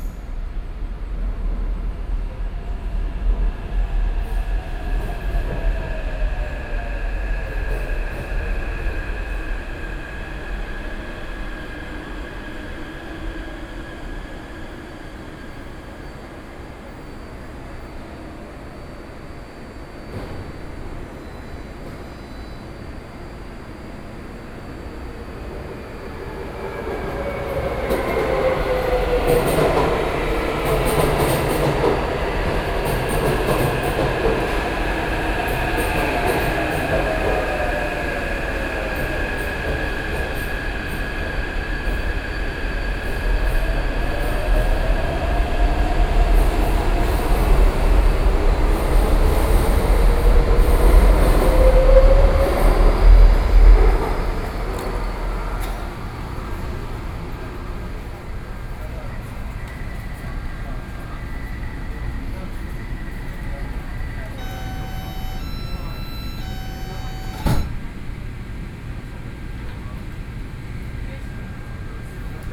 Qiyan Station, Taipei City - Platform
in the Platform, Sony PCM D50 + Soundman OKM II